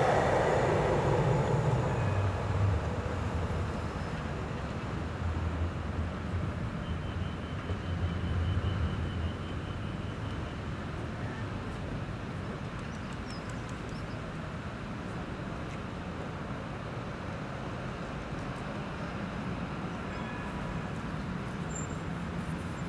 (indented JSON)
{
  "title": "Pl. Eugène Verboekhoven - Cage aux Ours, 1030 Schaerbeek, Belgique - Trains, trams and cars",
  "date": "2022-02-15 10:30:00",
  "description": "Windy day.\nTech Note : Ambeo Smart Headset binaural → iPhone, listen with headphones.",
  "latitude": "50.87",
  "longitude": "4.38",
  "altitude": "24",
  "timezone": "Europe/Brussels"
}